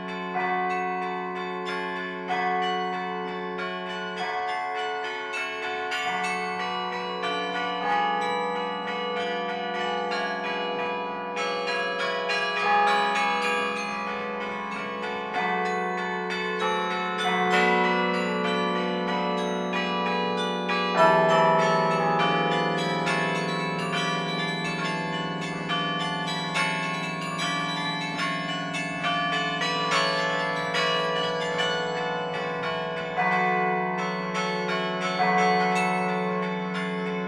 Lieu-dit Les Pres Du Roy, Le Quesnoy, France - Le Quesnoy - Carillon
Le Quesnoy - Carillon
Maitre Carillonneur : Mr Charles Dairay
France métropolitaine, France